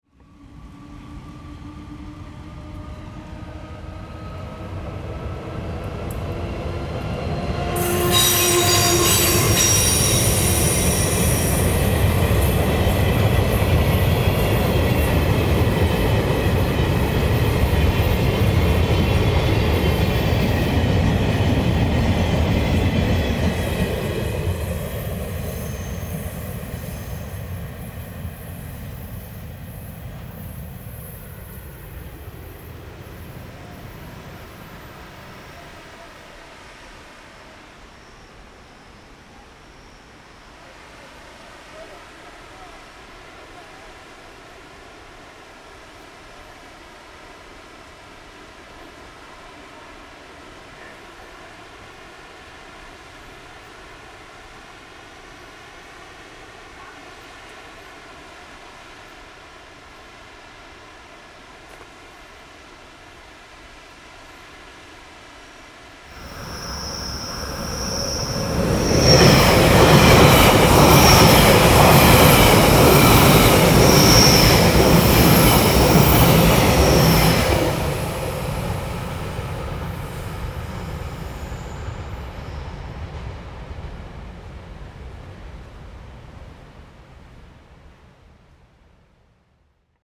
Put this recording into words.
Next to the tracks, Train traveling through, Zoom H4n+ Rode NT4